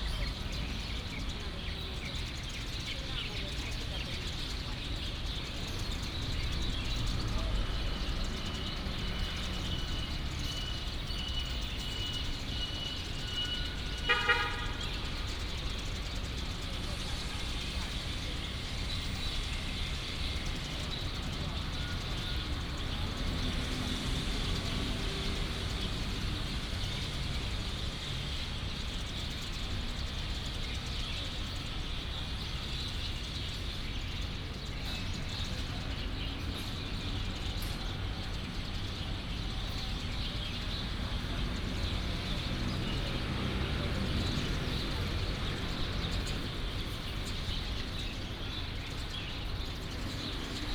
Traffic sound, in the park, Construction sound, Many sparrows
17 November, ~16:00